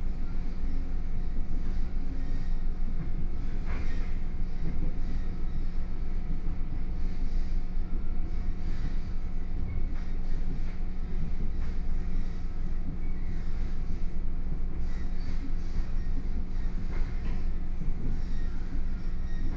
Su'ao Township, Yilan County - Yilan Line
Local Train, from Xinma Station to Su'ao Station, Binaural recordings, Zoom H4n+ Soundman OKM II